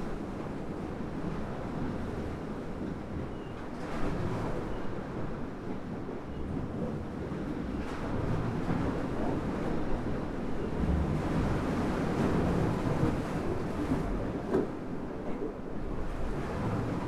close listening on Ventspils pier's stones
Ventspils, Latvia